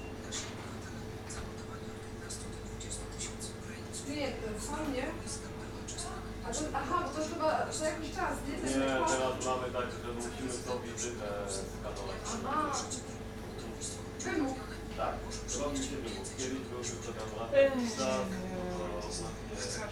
recorded in the back room of the hospital buffet, near a row of refrigerators, radio playing, shop assistant greats customers, owner places order for beverages.